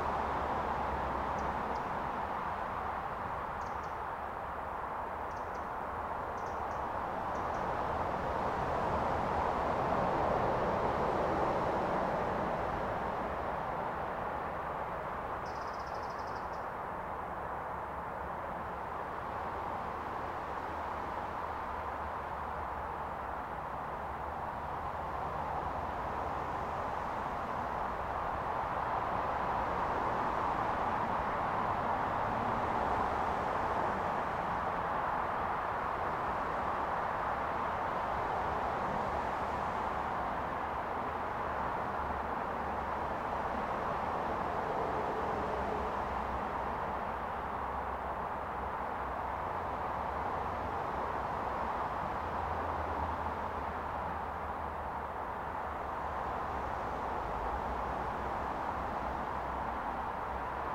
Beside the, sitting at the edge of the carpark at the Glen of the Downs nature reserve, Co. Wick - Listening to the N11
This is the sound of the N11 motorway and the birds in the trees, at the site where a road-protest was once en-camped. You can hear the traffic, the stream has dried up at this place (though it is still burbling away further down the valley). This was once a place where people gathered in 1997 live in and protect the nature reserve from a road-expansion project. Recorded with the EDIROL R09, sat at a picnic bench, listening to dog-walkers leaving in their cars, to the traffic on the main road, and the quietness of the trees themselves.